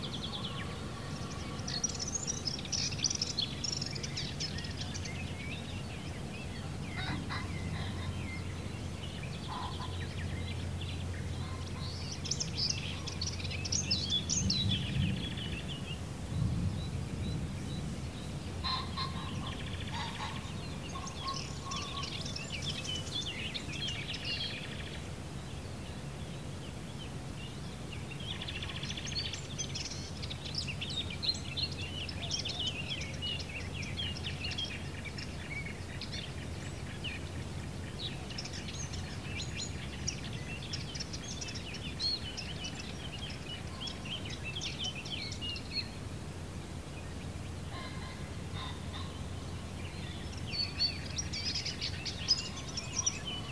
Mali pasman, Mali Pašman, Croatia - birds
recorded on Sunday morning, at dawn, at the International Dawn Chorus Day, The Dawn Chorus is the song of birds at around sunrise...
Zadarska županija, Hrvatska, 3 May 2020